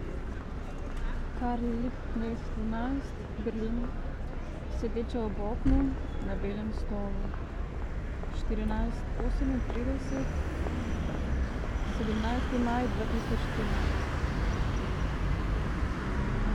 this sonorous fragment is part of Sitting by the window, on a white chair. Karl Liebknecht Straße 11, Berlin, collection of 18 "on site" textual fragments ... Ljubljana variation
Secret listening to Eurydice 10, as part of Public reading 10
Zahodna Slovenija, Slovenija, 7 June